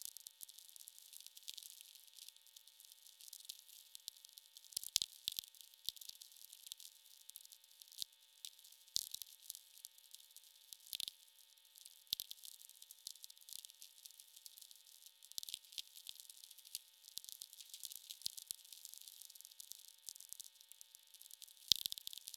atmospheric "births" of tweeks, pings, and clicks through ionosphere in the countryside .. distant hums of noise floor reacting.
Indiana, United States of America